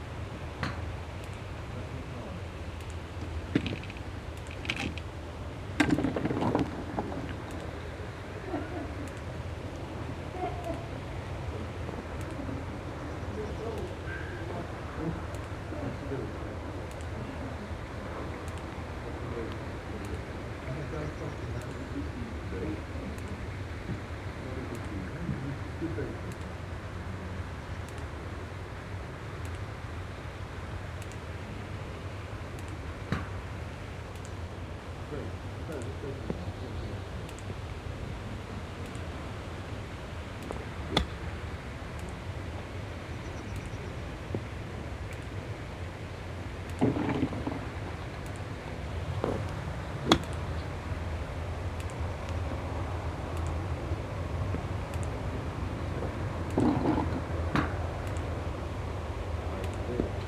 Auf der driving range der Golfanlage.
Das Geräusch der Abschläge mit verschiedenen Schlägern. Im Hintergrund Gespräche einer älteren Dame mit ihrem Golflehrer. Windbewegungen auf dem offenen, abschüssigen Feld.
At the riving range of the golf course. The sounds of swings with different bats. In the distance an older woman talking with ther golf teacher. Wind movements on the steep, open field.
Clervaux, Luxembourg